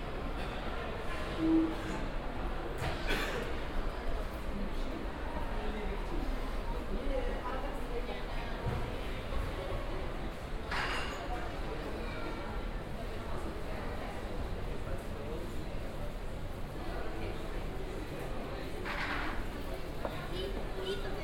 lisbon, airport - soundwalk
soundwalk through different parts and levels of lisbon airport. walks starts at the entrance 1st floor and ends in parking area.
binaural, use headphones.
Lisbon, Portugal, 4 July 2010, ~13:00